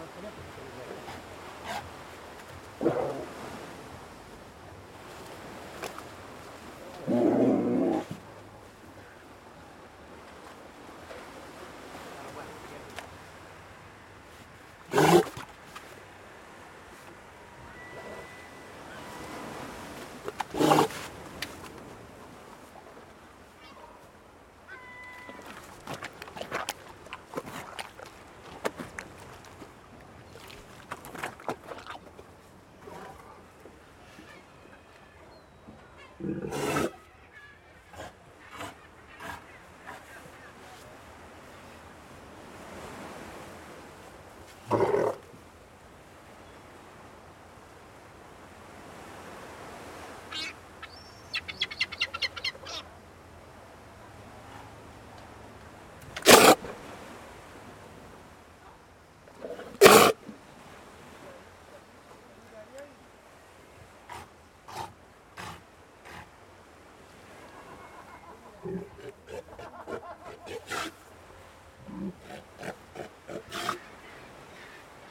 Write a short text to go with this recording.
Sea lions close to the fish sellers at Caleta Portales. Recorded by a MS Schoeps CCM41+CCM8